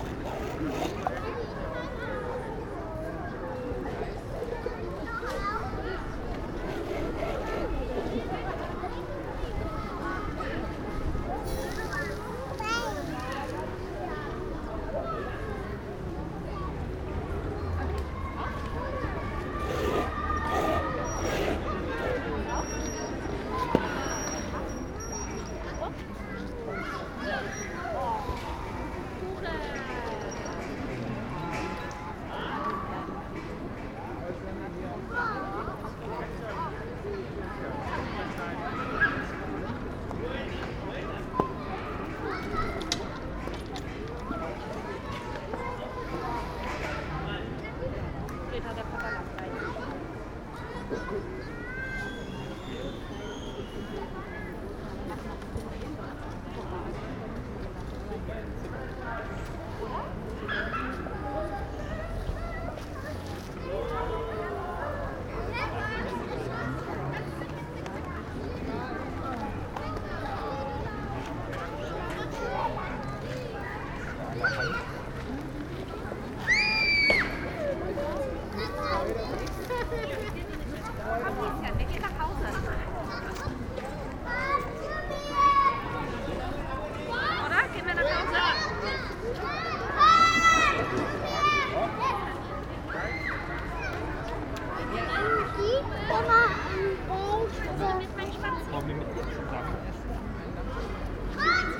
Hamburg, Deutschland - Children playing
Annenstraße & Paulinenplatz. Children playing in a park, with the parents.
Hamburg, Germany, 19 April 2019, 17:00